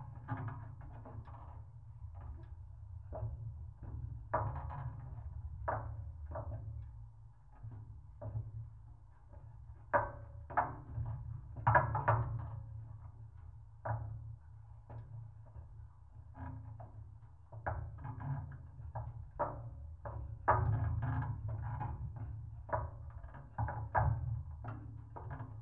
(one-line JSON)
{"title": "Kaliningrad, Russia, contact on staircase", "date": "2019-06-08 20:30:00", "description": "contact microphone on staircase/trap", "latitude": "54.71", "longitude": "20.50", "altitude": "1", "timezone": "Europe/Kaliningrad"}